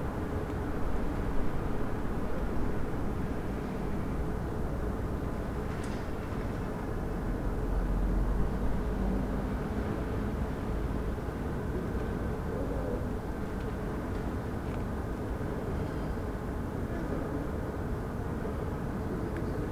berlin: friedelstraße - the city, the country & me: night-time ambience
the city, the country & me: december 4, 2013